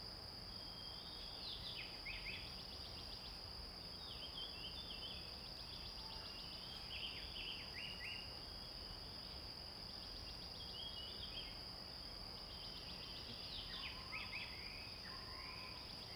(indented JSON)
{
  "title": "Shuishang Ln., Puli Township - early morning",
  "date": "2016-04-21 05:17:00",
  "description": "early morning, Sounds of various birds\nZoom H2n MS+XY",
  "latitude": "23.94",
  "longitude": "120.92",
  "altitude": "514",
  "timezone": "Asia/Taipei"
}